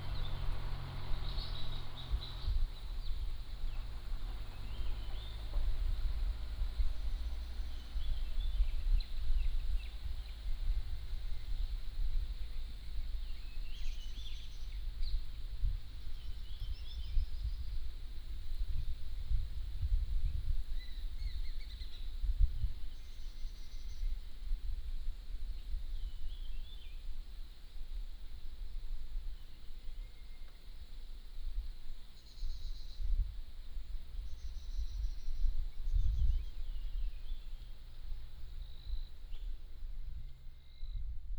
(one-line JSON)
{"title": "奇美村, Rueisuei Township - Birds singing", "date": "2014-10-09 14:17:00", "description": "Birds singing, Traffic Sound, In the side of the road", "latitude": "23.50", "longitude": "121.41", "altitude": "162", "timezone": "Asia/Taipei"}